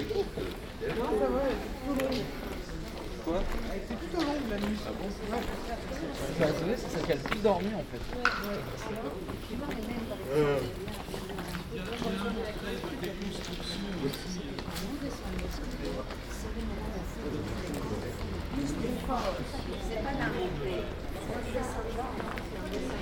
Carrer de la Plaça, Portbou, Girona, Spagna - Port Bou October 2019: le Maître et les Disciples
Port Bou, Thursday October 3rd, 11:51 a.m. A group of artists, architects, philosophers, musicians, students staying and walking in dialogue on the stairs of Carre de la Plaça.